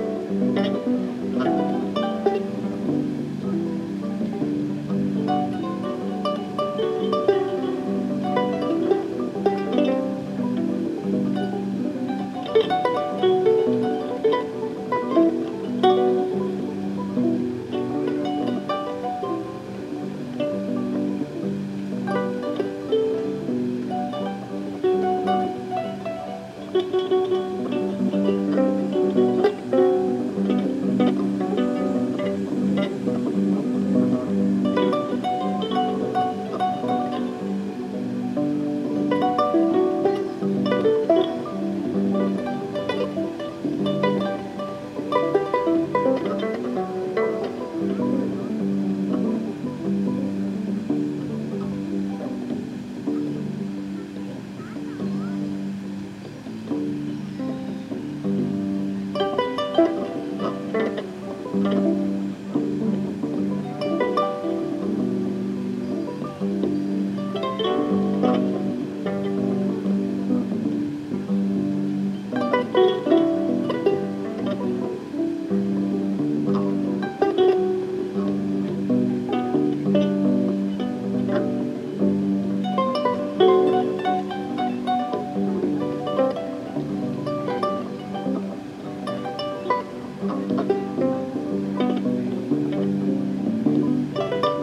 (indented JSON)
{
  "title": "Le Plateau-Mont-Royal, Montreal, QC, Canada - Improvisation from a stranger who play a modified electracoustic kora in the park Lafontaine",
  "date": "2016-09-05 03:14:00",
  "description": "Improvisation from a stranger who play a modified electracoustic kora in the park Lafontaine\nREC: DPA 4060, AB",
  "latitude": "45.53",
  "longitude": "-73.57",
  "altitude": "41",
  "timezone": "America/Toronto"
}